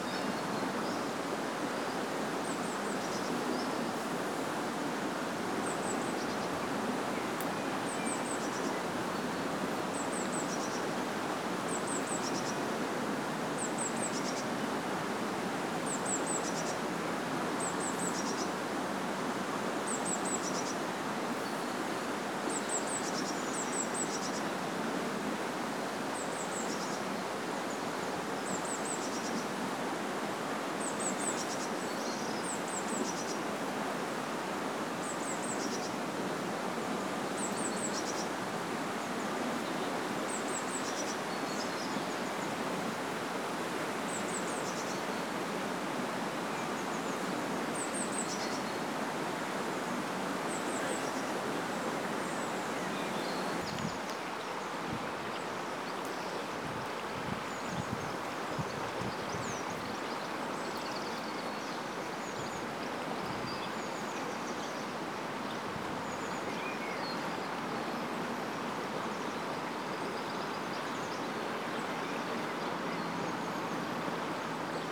Griffith Park, Dublin, Co. Dublin, Ireland - Wind and birds in the willows at River Tolka
Bealtaine workshops with older people exploring the soundscape and landscape of the River Tolka as it flows through Griffith Park in Drumcondra, Dublin. Recordings were made through a series of walks along the river. The group reflected on these sounds through drawing and painting workshops in Drumcondra library beside the park